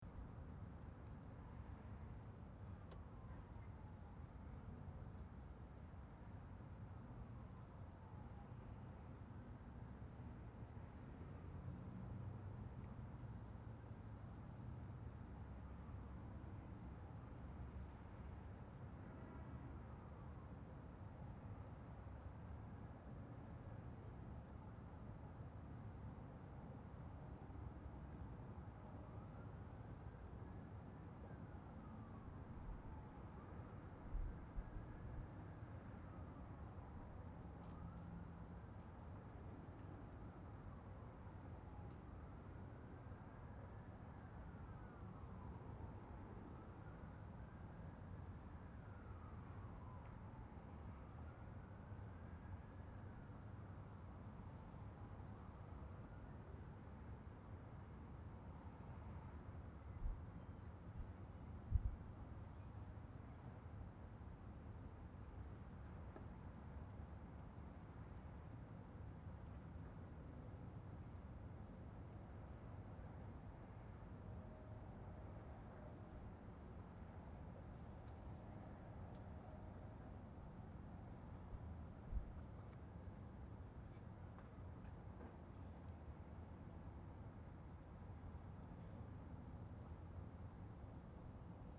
University, Windsor, ON, Canada - Windsor Riverfront
Sounds of the water can be heard quietly in the background, with sounds of the city heard in the distance. Recorded on a TASCAM zoom pointing towards Detroit. I Found it to be very relaxing to sit back and simply listen to the sounds around you for a brief minute or so, especially in such a tranquil location. (Recommended to listen with headphones and increased volume)